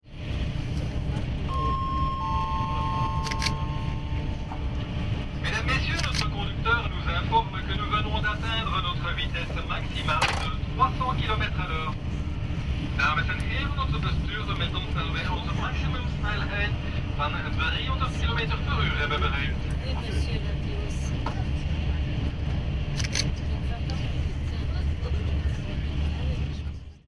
Thalys, announcement, 300 km/h
Promotional tour of Thalys train from Liège to Brussels. Conductor's announcement that max. speed of 300 km/h has just been reached. Binaural. Zoom H2 and OKM ear mics.